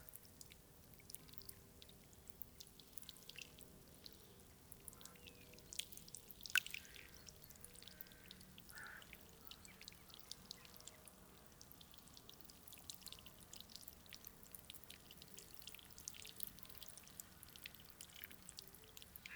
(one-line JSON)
{"title": "Hénouville, France - High tide", "date": "2016-09-18 11:40:00", "description": "The high tide on the Seine river is called Mascaret. It arrives on the river like a big wave. On the mascaret, every beach reacts differently. Here the beach blows with strange soft sounds.", "latitude": "49.47", "longitude": "0.93", "timezone": "Europe/Paris"}